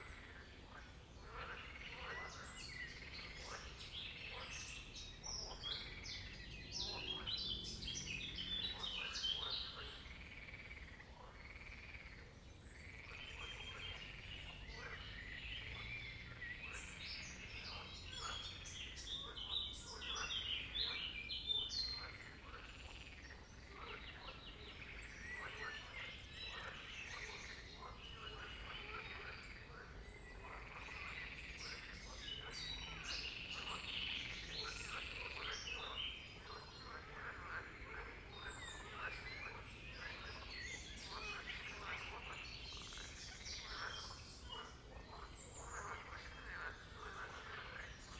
Beckerbruch Park, Dessau-Roßlau, Deutschland - Naturkulisse am Wallwitzsee
Vogelgesang und Froschquaken | birdsong and frog croaking